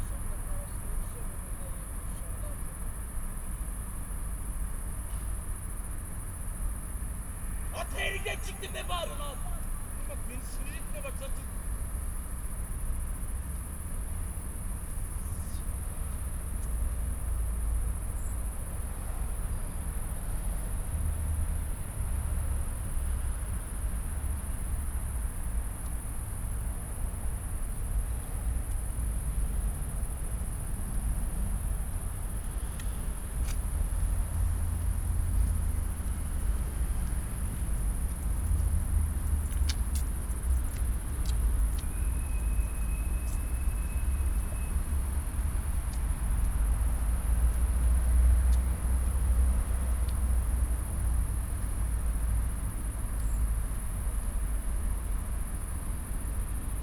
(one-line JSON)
{"title": "Bergiusstr., Neukölln, Berlin - ambience, traffic hum and crickets", "date": "2013-07-24 21:30:00", "description": "Bergiusstr., Neukölln, Berlin, the road is closed. distant traffic hum, crickets and a light breeze in poplar trees, barely audible, an angry young man.\nSonic exploration of areas affected by the planned federal motorway A100, Berlin.\n(Sony PCM D50, DPA4060)", "latitude": "52.47", "longitude": "13.46", "altitude": "34", "timezone": "Europe/Berlin"}